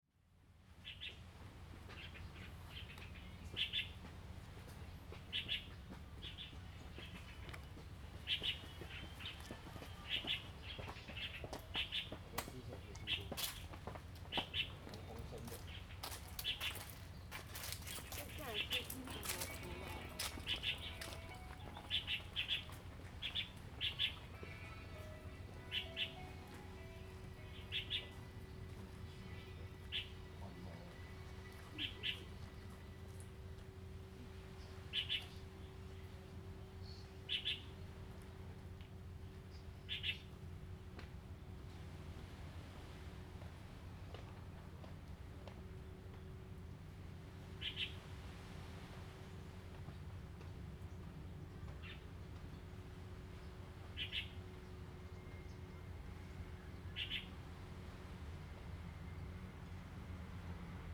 Hsiao Liouciou Island - Birds and waves
Sound of the waves, Birds singing, Tourists
Zoom H2n MS +XY